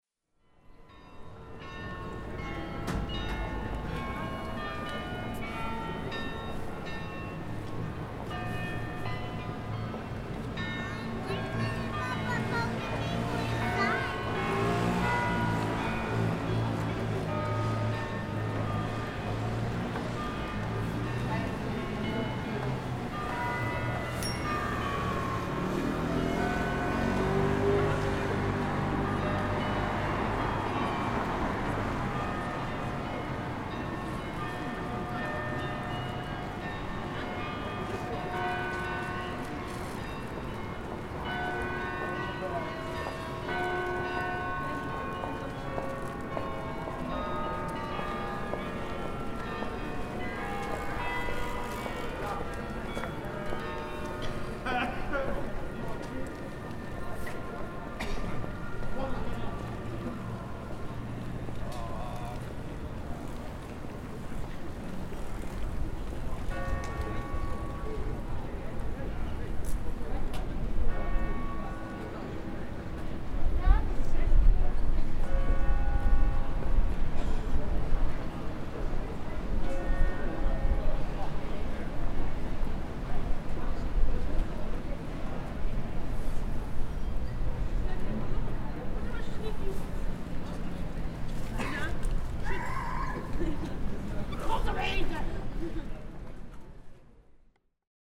4pm Sunday afternoon, bells, people and traffic. (A little wind noise towards end)